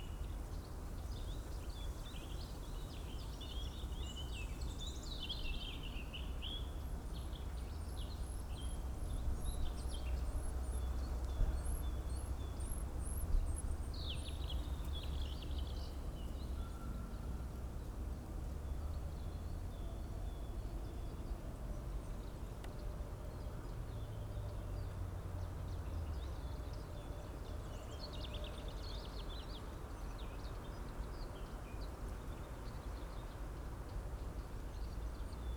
Sunday afternoon, ideling in the sun, on a pile of wood, at the nothern most edge of the city, listening to the ambience
(Sony PCM D50, DPA4060)